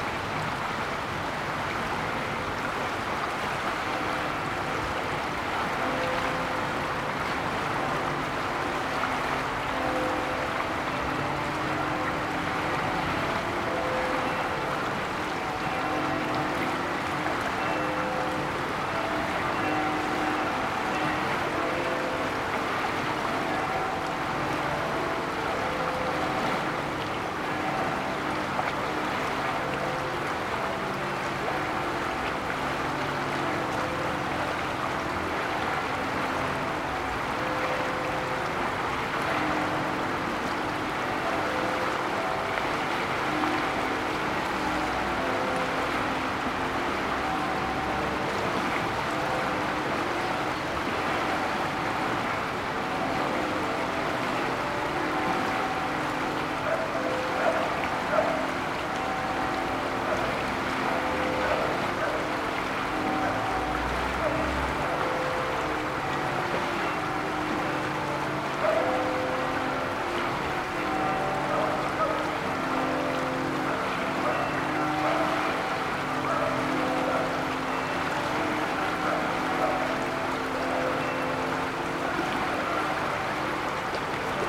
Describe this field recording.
River flow and funeral bells in the distance. Tech Note : Sony PCM-D100 internal microphones, wide position.